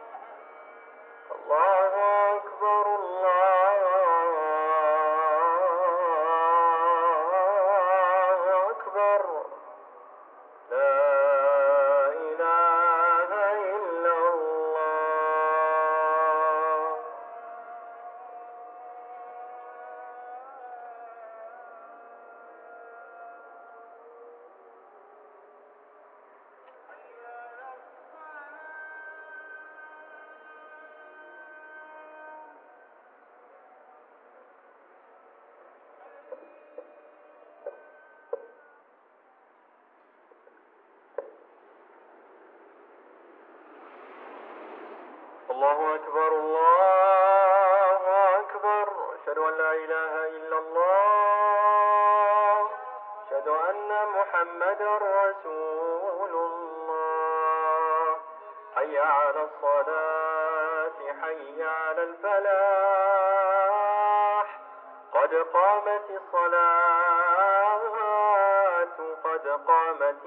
Mosquée Alfurdha - Port de pêche de Muharraq - Bahrain
Appel à la prière de 18h35
محافظة المحرق, البحرين